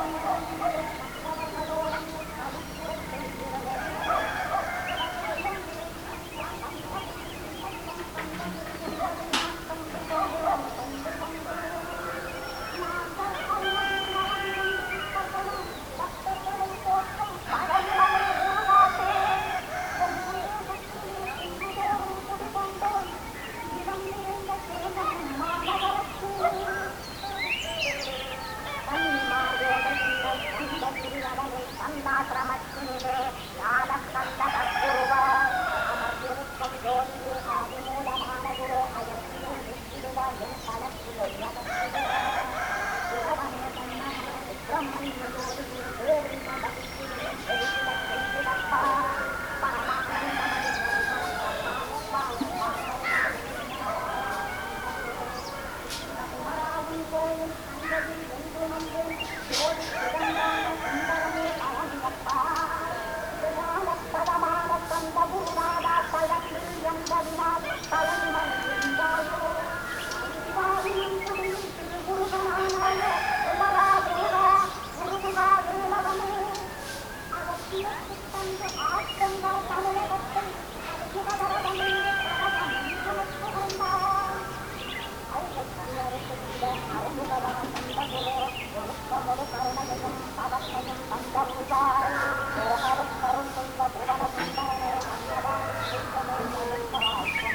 Nullatanni, Munnar, Kerala, India - dawn in Munnar - over the valley 5
dawn in Munnar - over the valley 5
5 November 2001, 07:16